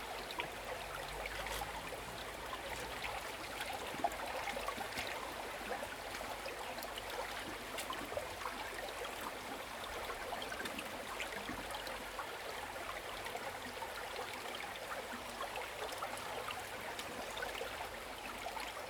Sound of water, Small streams, Streams and Drop
Zoom H2n MS+XY
Nantou County, Taiwan